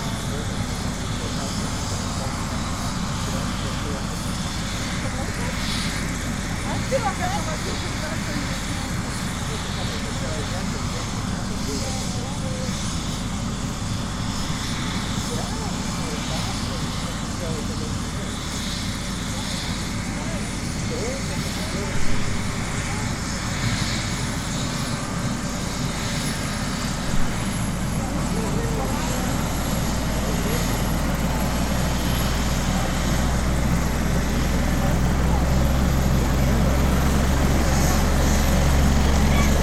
Vasaknos, Lithuania, helicopter
helicopter leaving Vasaknos' manor
7 November 2020, 15:45